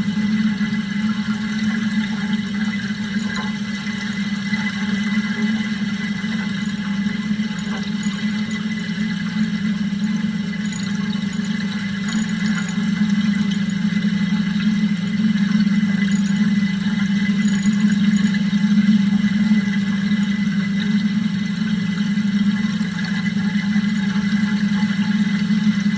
nearby Ohakvere
Sediment basin of Estonia oil shale mine. Recorded with contact mics from a tap on one of the pipes.
Ida-Virumaa, Estonia, July 2010